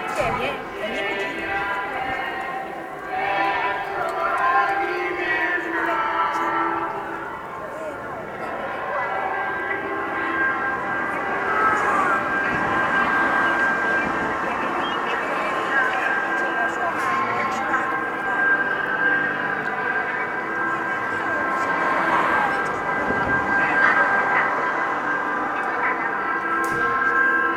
Roncole (PV), Italy - Eucharist celebration and fast cars
The Church of Roncole stands in front of the main street of the valley. The Sunday Eucharist Celebration was amplified through an old loudspeaker on the bell-tower but none was outside. The words of the celebration and of the choirs mixed with the noise of the fast cars on the street create a surrealistic effect. The tension releaases when, at the end of the celebration, people comes out.